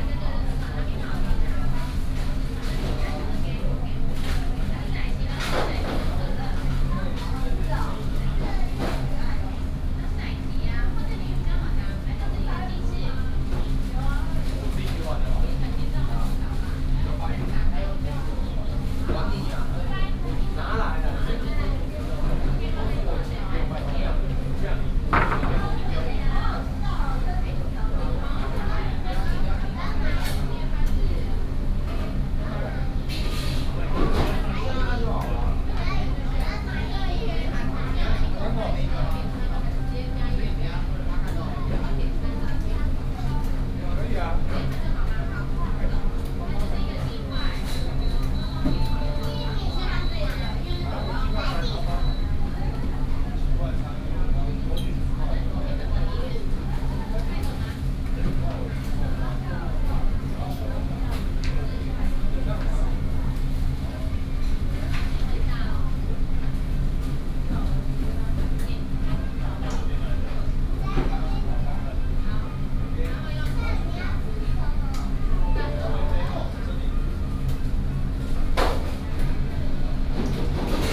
In the fast-food restaurant, McDonald's
Taipei City, Taiwan, 2 June